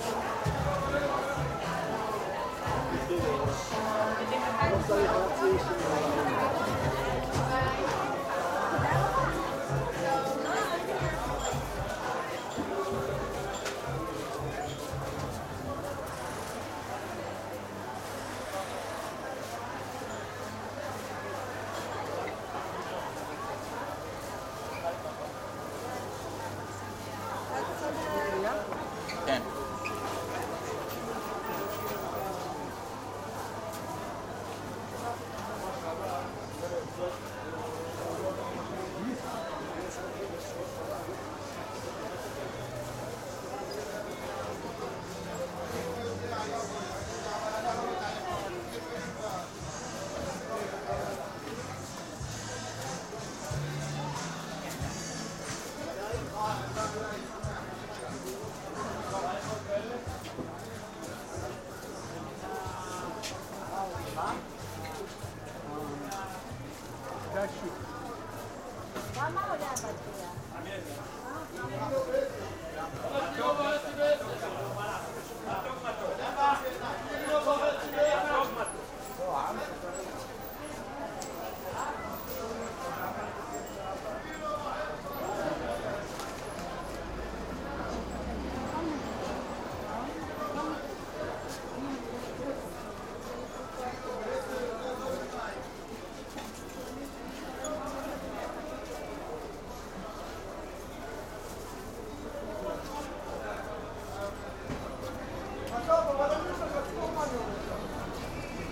Mahane Yehuda, Jerusalem, Israel - Soundwalk through the covered Shuk
Soundwalk through the main covered street of the market, from Jaffa st to Agripas st. This is not the market's busiest time, but the nice weather and nearing passover holiday probably made more people than usual come.